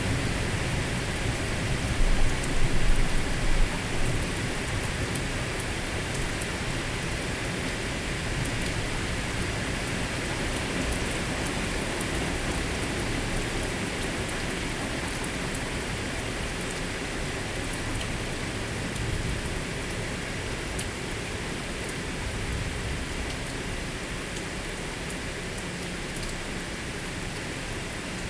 Thunderstorm Colchester, Essex. july 19th - Early Morning
Original recording was 3 hours in Length - excerpt.